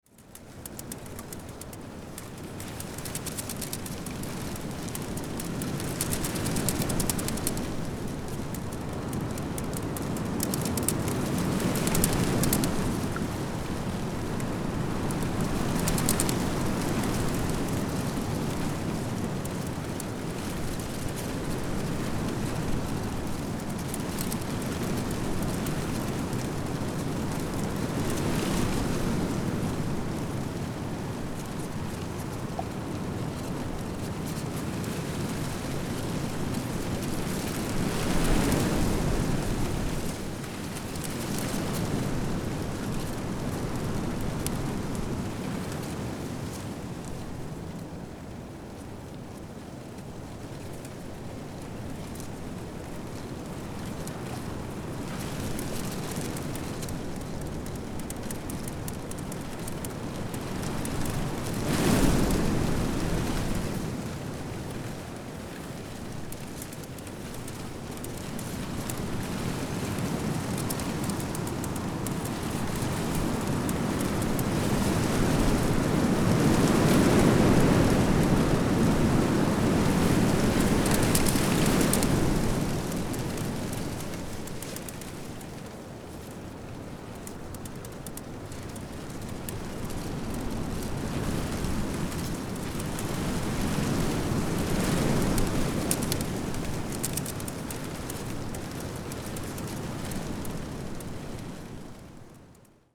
windy spring day and my microphones in the reeds...